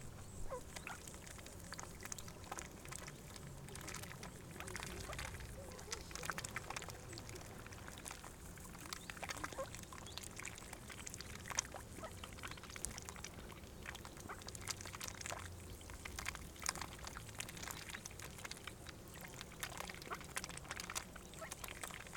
Bedřichov dam, Bedřichov, Česko - Ducks
Ducks on the banks of Bedřichov Dam. Sunny warm summer afternoon.